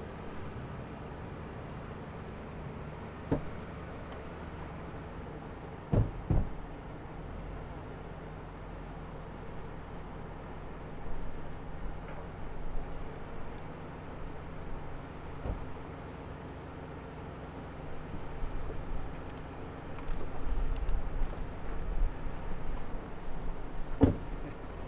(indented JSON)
{
  "title": "neoscenes: Lobos overlook parking lot",
  "date": "2011-08-24 10:15:00",
  "latitude": "37.49",
  "longitude": "-106.80",
  "altitude": "3581",
  "timezone": "America/Denver"
}